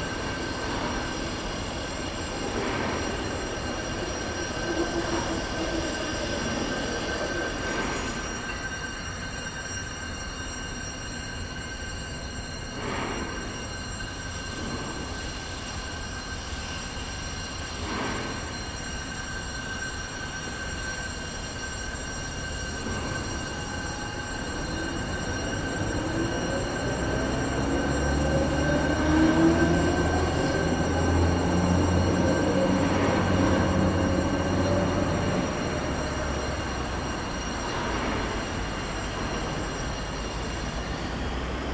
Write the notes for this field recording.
Cockerill-Sambre, Ougrée, blast furnace, pelleting plant, diesel locomotive. Zoom H2 and OKM ear mics.